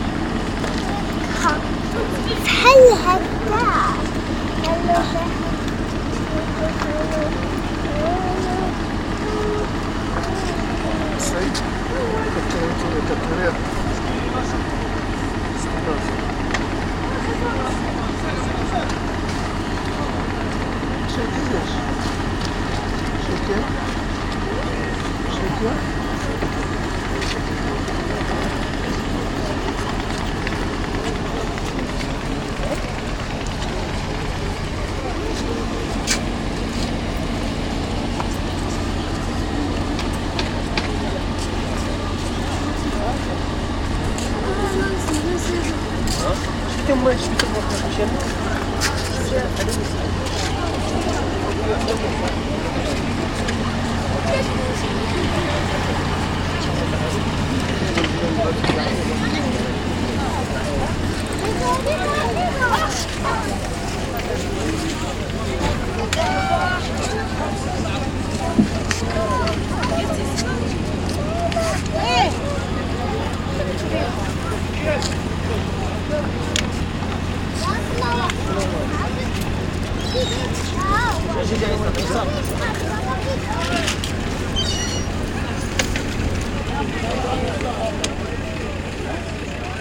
Marocco, Essaouira, port, fishermen, boat